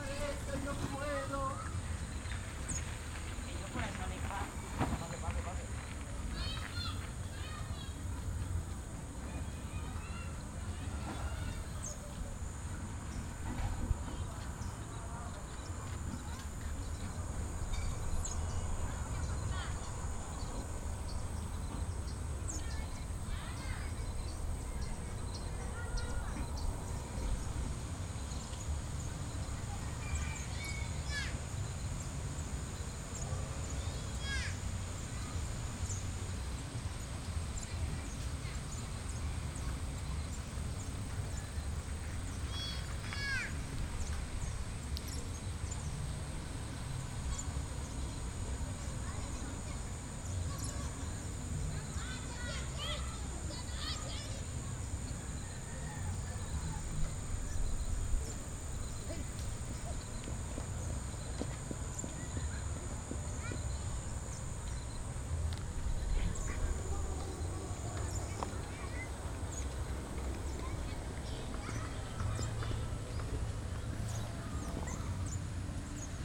Soundwalk around Laura Montoya School. Midday, windy and warm.